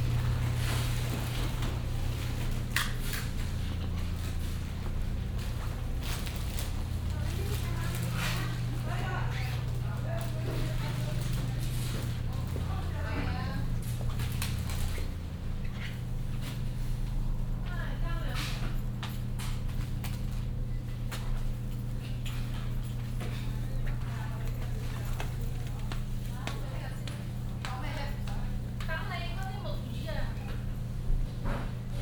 vancouver - east georgia street - chin. market
in a chinese supermarket in china town
soundmap international
social ambiences/ listen to the people - in & outdoor nearfield recordings